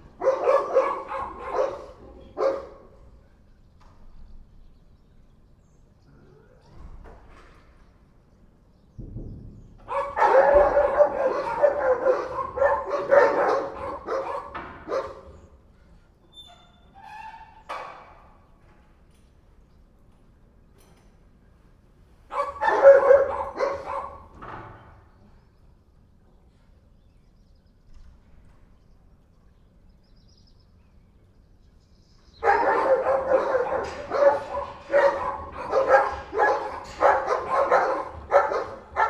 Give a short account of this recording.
Dogs barking in the morning, marking their territory.